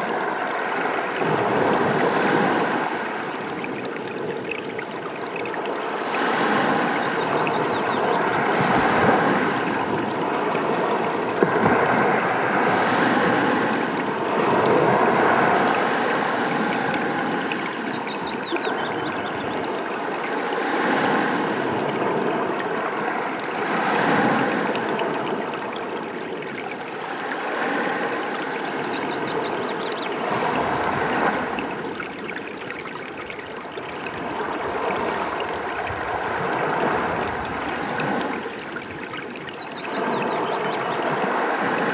stream on the gravel of the current in the sea, White Sea, Russia - stream on the gravel of the current in the sea
Stream on the gravel of the current in the sea.
Ручей по гальке текущий в море, в лесу поет птица.